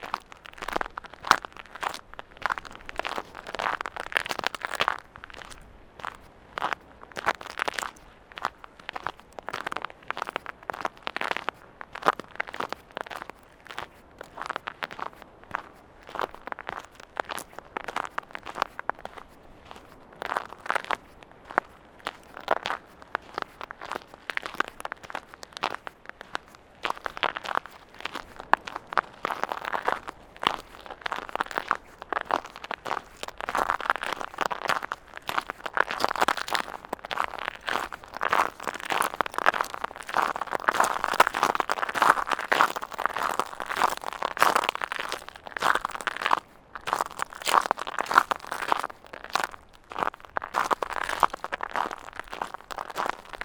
Middelkerke, Belgique - Solen shells
During the low tide on a vast sandy beach, walking on shells. There's a small mountain of solen shells, called in french "knives".